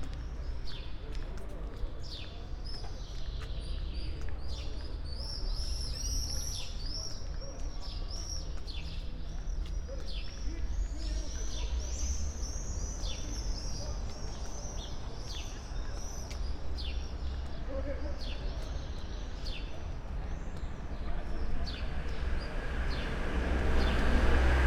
Mladinska ulica, Maribor - swifts
summer evening, swifts, outgoers ....
Maribor, Slovenia, June 2013